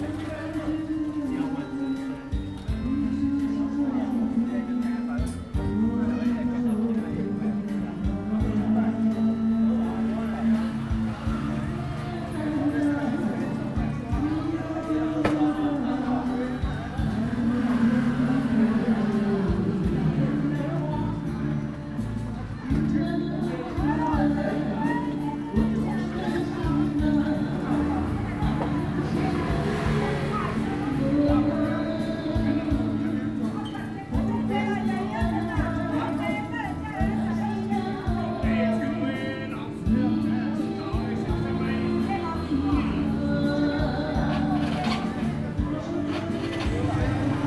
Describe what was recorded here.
In the side of the road, Tourists, Restaurant, Traffic Sound, Zoom H2n MS +XY